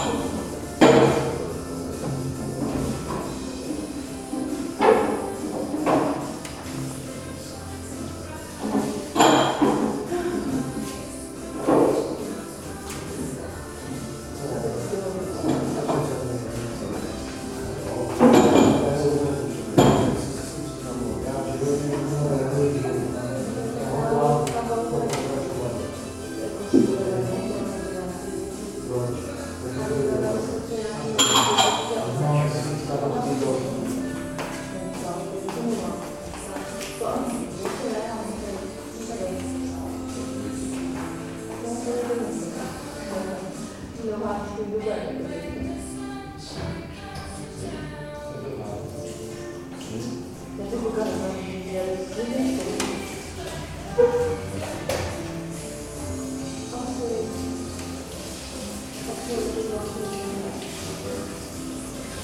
Český Krumlov, Tschechische Republik - Restaurace U Nádraží
Restaurace U Nádraží, Třída Míru 2, 38101 Český Krumlov